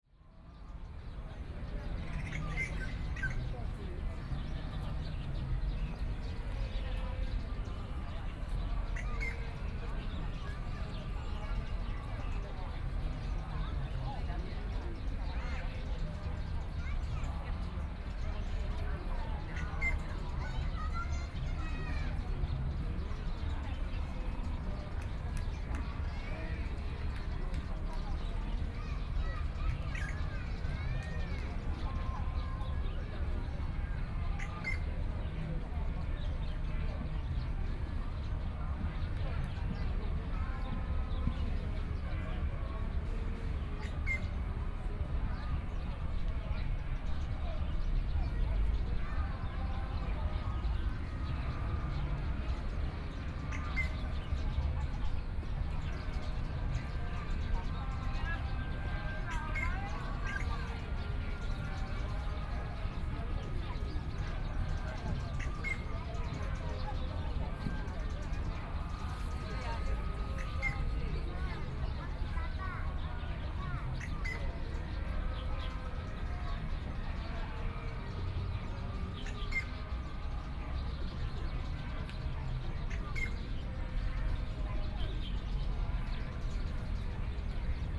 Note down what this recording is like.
Sitting in the park, Birdsong.Sunny afternoon, Please turn up the volume a little, Binaural recordings, Sony PCM D100 + Soundman OKM II